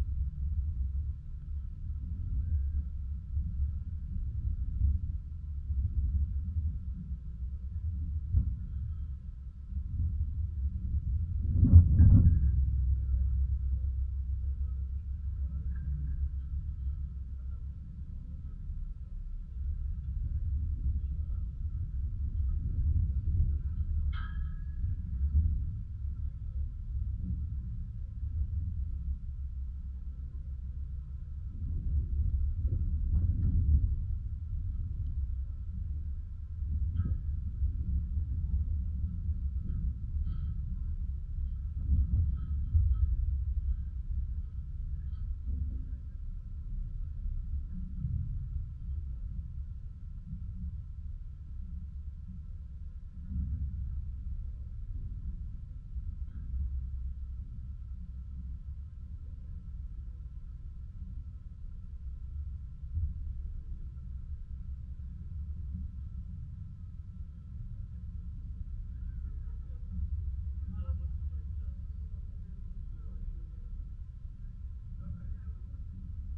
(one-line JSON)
{"title": "Snezka mountain, Czechia, contact mic on armature", "date": "2017-08-15 12:10:00", "description": "contact microphone on a piece of armature found on the top of the mountain", "latitude": "50.74", "longitude": "15.74", "altitude": "1592", "timezone": "Europe/Prague"}